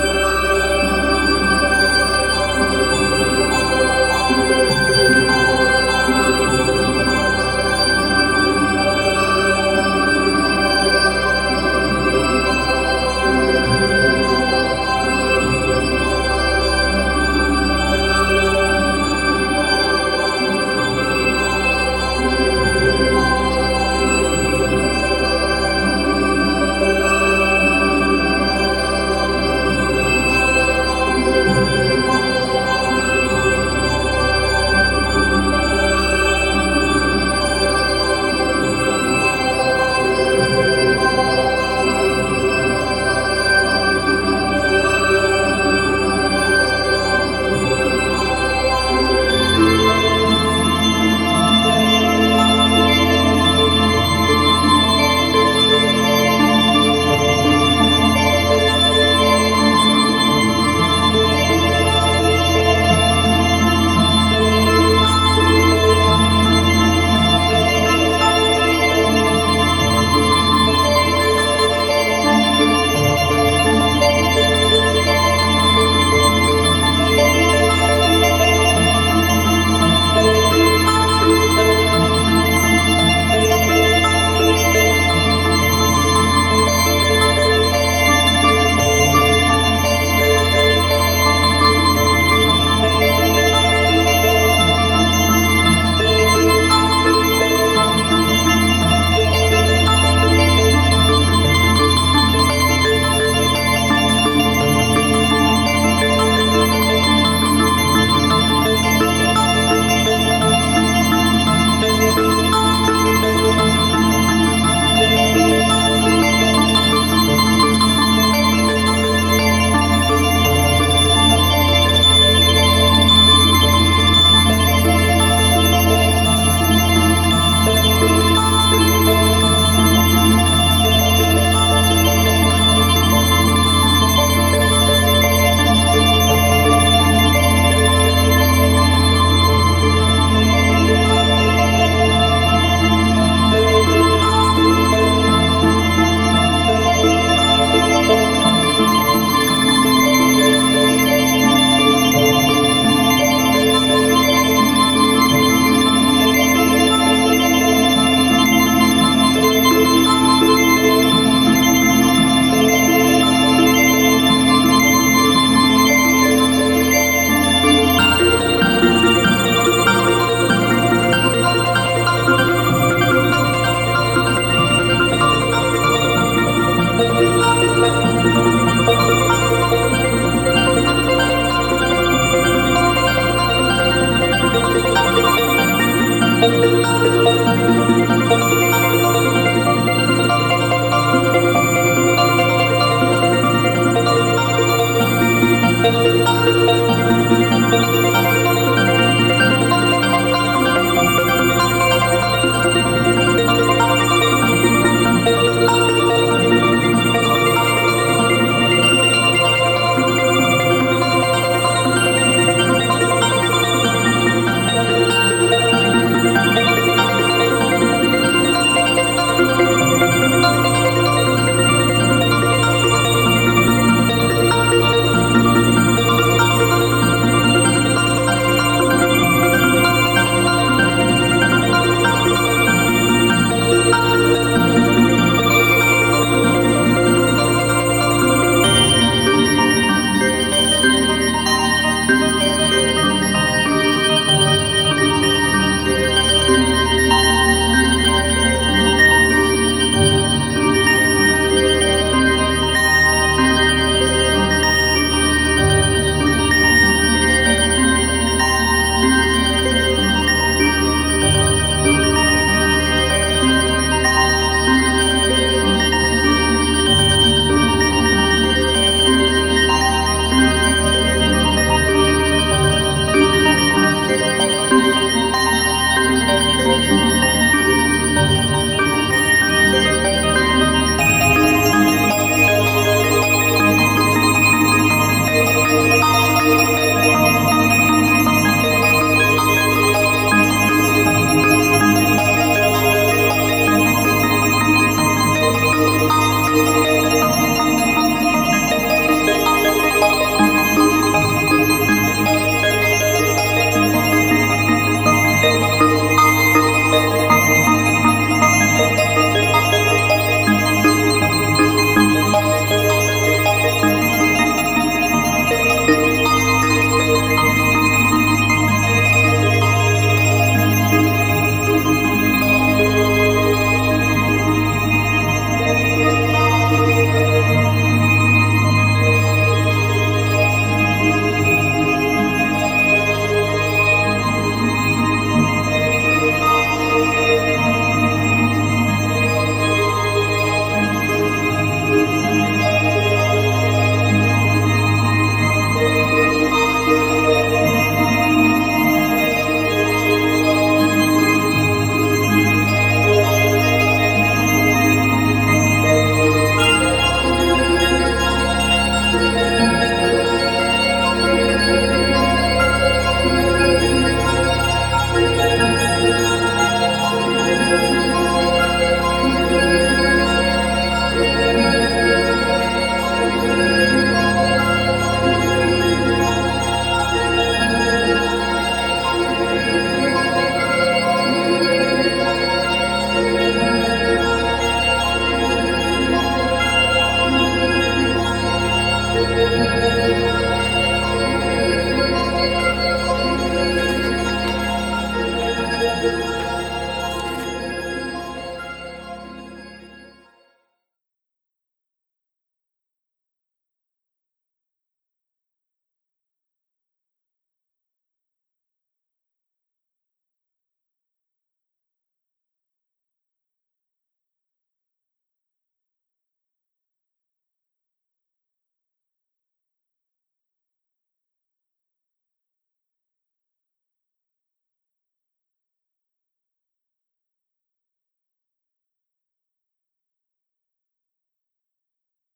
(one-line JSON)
{"title": "Altstadt-Süd, Köln, Deutschland - cologne, kunst sation st. peter, sound installation", "date": "2012-04-25 15:30:00", "description": "Inside the old cathedral building. The sound of a 4 channel sound installation by John McGuirre entitled Pulse Music III hat is being prsented within the concert series Reihe M. The sound is distributed to a eight speakers setup that are positioned in a wide circle around the centre of the space.\nsoundmap new - art spaces, social ambiences and topographic field recordings", "latitude": "50.93", "longitude": "6.95", "altitude": "57", "timezone": "Europe/Berlin"}